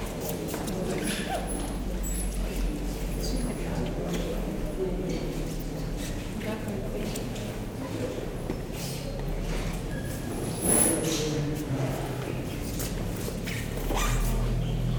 Maastricht, Pays-Bas - Onze-Lieve-Vrouw church
In front of the Onze-Lieve-Vrouw church, it's a cobblestones square. People are discussing quietly. I'm entering in the church and in the chapel, the door grinds, and after I go out. A touristic group is guided. At twelve, the bell is ringing angelus.
Maastricht, Netherlands, 20 October 2018